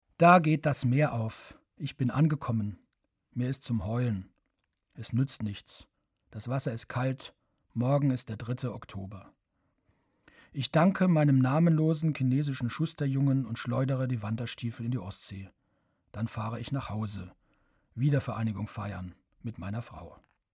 Produktion: Deutschlandradio Kultur/Norddeutscher Rundfunk 2009
Lubeck, Germany